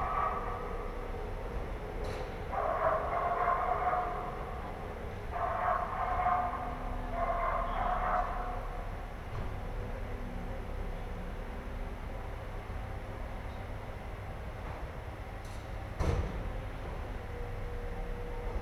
Ascolto il tuo cuore, città, I listen to your heart, city. Several chapters **SCROLL DOWN FOR ALL RECORDINGS** - Round one pm with sun and dog in the time of COVID19 Soundscape
"Round one pm with sun and dog in the time of COVID19" Soundscape
Chapter XXXII of Ascolto il tuo cuore, città. I listen to your heart, city
Friday April 3rd 2020. Fixed position on an internal terrace at San Salvario district Turin, twenty four days after emergency disposition due to the epidemic of COVID19.
Start at 1:09 p.m. end at 01:42 p.m. duration of recording 33’04”.